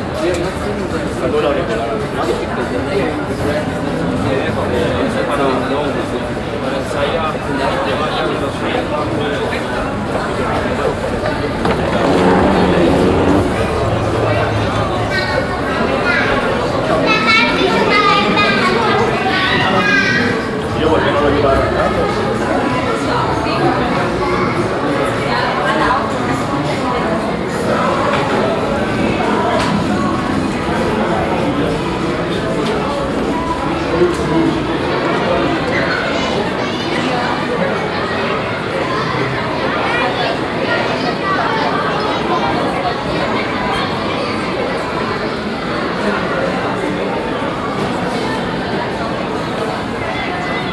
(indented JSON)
{
  "title": "Bockenheim, Frankfurt am Main, Deutschland - frankfurt, fair, Torhaus",
  "date": "2012-03-21 10:15:00",
  "description": "At the arrival zone of the fair. The sound of people talking, suitcases on rollers, announcements and the fair radio in the morning time.\nsoundmap d - social ambiences and topographic field recordings",
  "latitude": "50.11",
  "longitude": "8.64",
  "altitude": "115",
  "timezone": "Europe/Berlin"
}